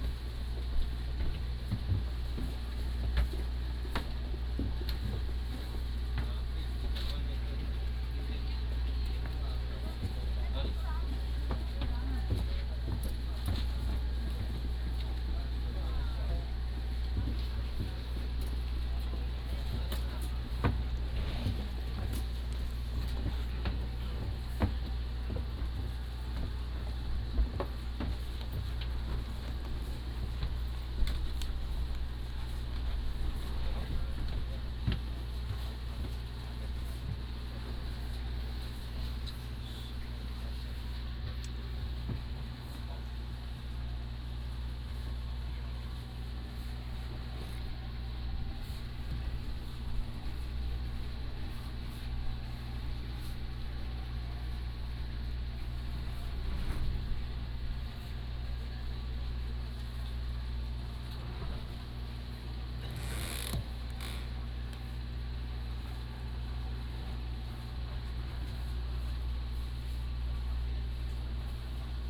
白沙碼頭, Beigan Township - On a yacht
On a yacht, sitting at the end of the yacht, Tourists
14 October 2014